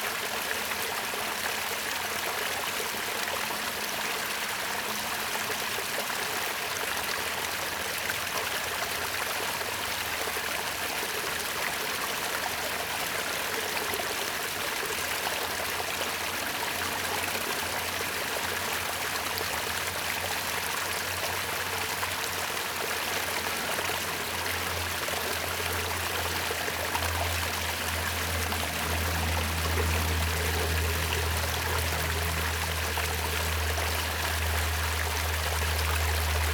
Paper Dome 紙教堂, Nantou County - Stream
Water sound
Zoom H2n MS+XY
Puli Township, 桃米巷52-12號, 24 March, 07:39